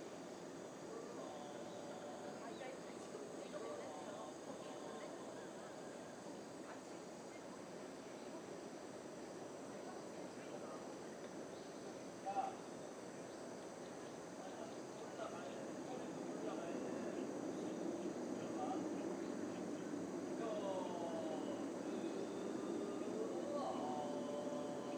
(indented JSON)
{
  "title": "대한민국 서울특별시 서초구 서초동 산141-4 - Daesung Buddhist Temple",
  "date": "2019-09-12 19:15:00",
  "description": "Daesung Buddhist Temple, monks practising scriptures\n대성사, 불경 연습",
  "latitude": "37.48",
  "longitude": "127.01",
  "altitude": "149",
  "timezone": "Asia/Seoul"
}